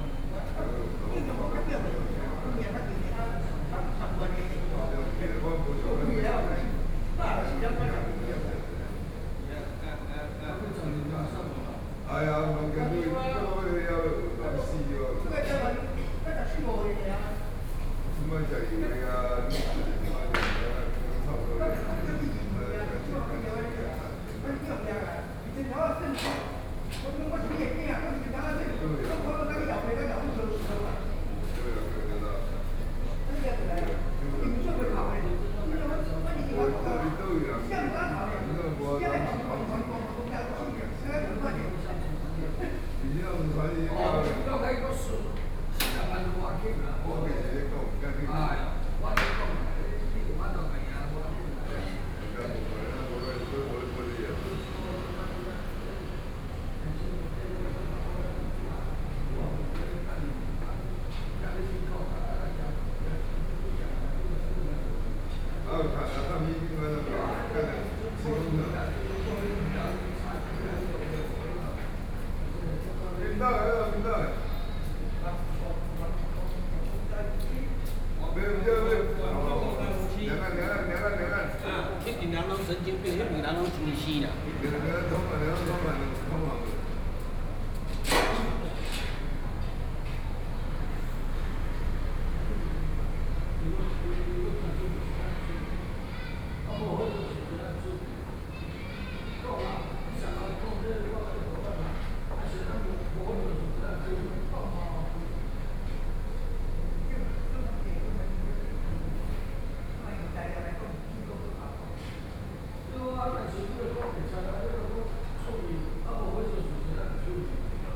Zhuwei, Tamsui District - Chat
Several elderly people in front of the temple plaza, chat, Binaural recordings, Sony PCM D50 + Soundman OKM II